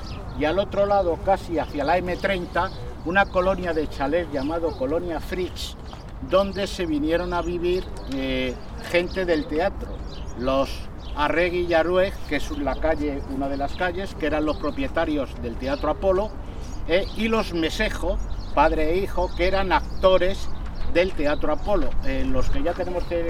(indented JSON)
{
  "title": "Adelfas, Madrid, Madrid, Spain - Pacífico Puente Abierto - Transecto - 02 - Calle Seco",
  "date": "2016-04-07 18:50:00",
  "description": "Pacífico Puente Abierto - Transecto - Calle Seco",
  "latitude": "40.40",
  "longitude": "-3.67",
  "altitude": "607",
  "timezone": "Europe/Madrid"
}